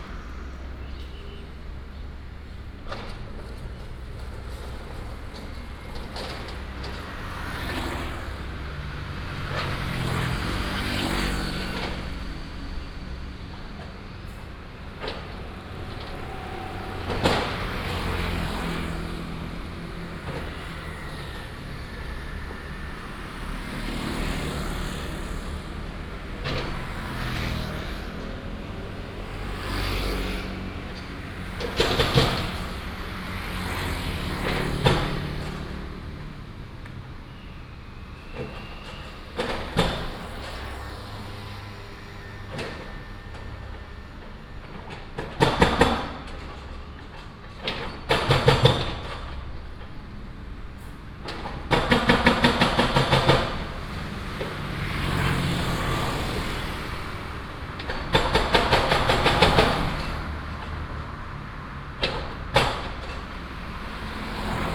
Sec., Nanjing E. Rd., East Dist., Taichung City - Site construction sound
Site construction sound, Traffic sound, Excavator, Binaural recordings, Sony PCM D100+ Soundman OKM II